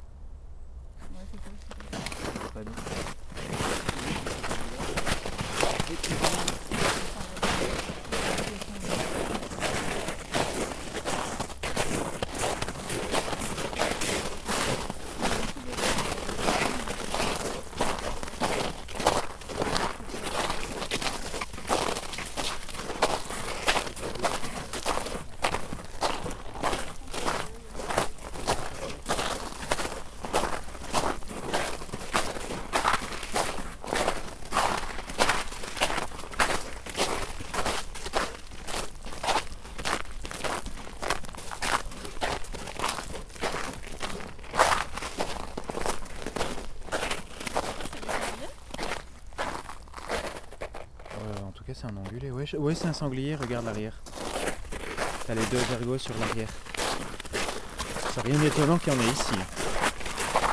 Differdange, Luxembourg - Walking in the snow
Walking in a iced snow, through a beautiful forest.
15 February 2015